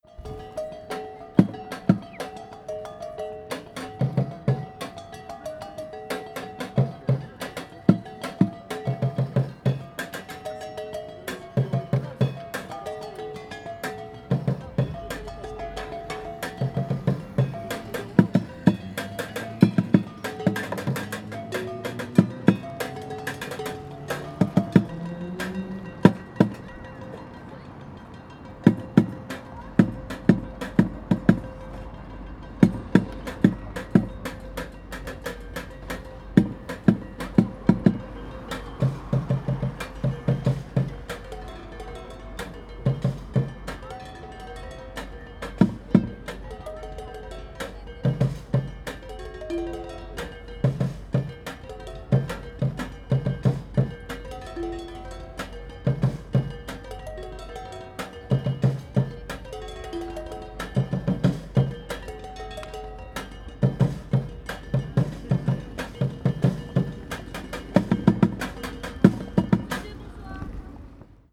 Place Masséna, Nice, France - street drummer at night
This guy was playing a homemade drum-kit/gamelan. It sounded very good, but unfortunately the police came and stopped him just after I started recording, because it was too late to be playing music on the street.
9 May 2014, 22:02